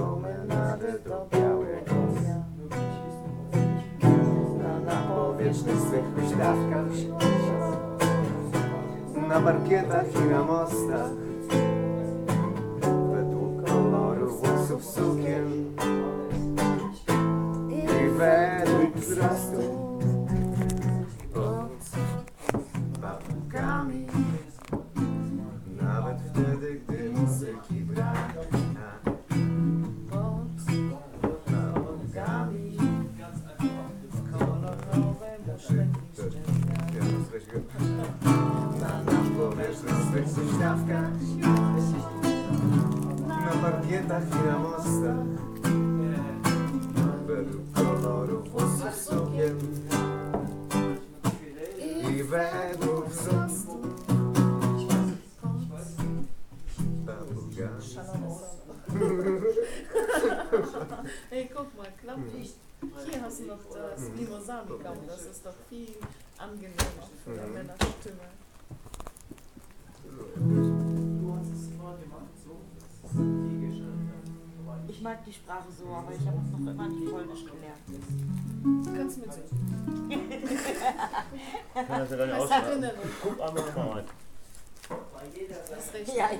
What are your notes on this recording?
… the small hours of the morning, after a long 40th birthday party… the remaining guests reassemble the living room… settle around the low table… and Anna reaches for the Polish song books in the shelves behind her…. … in den frühen Morgenstunden, nach einer langen 40sten Geburtstagsparty… die verbleibenden Gäste setzen das Wohnzimmer wieder in Stand… sammeln sich um den niedrigen Tisch… und Anna greift nach den Polnischen Liederbüchern im Regal hinter ihr… mobile phone recording, Anna Huebsch is an artist, originally from Gdansk, now based in Hamm.